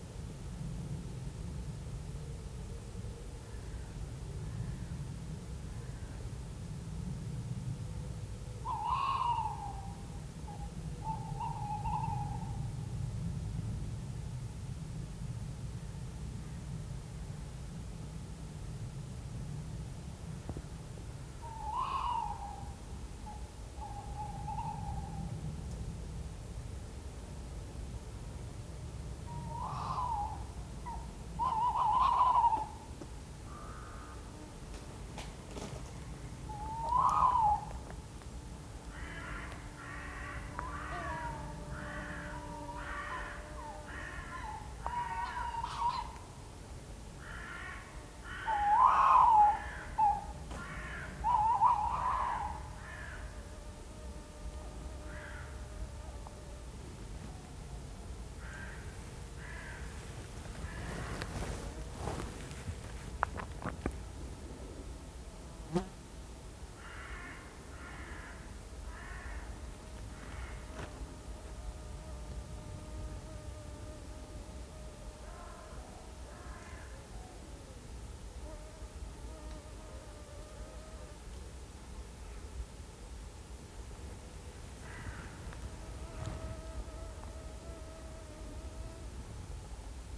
Fen Covert, Halesworth, UK - Tawny owl in the darkening wood
Walking back at dusk through the marshy, spooky wood, I move quickly hoping to outrun the mosquitoes. Planes are always overhead and crows crow in the tree tops. Suddenly I hear an owl and stop. The call gets closer and all at once a dark shape flies across my path and zigzags away into the darkness between the trees. Maybe it was a trick of the fading light but it was much bigger than I expected. Western Europe is losing its bird populations fast. For future listening I want to be able to hear them still.
18 July 2018, ~21:00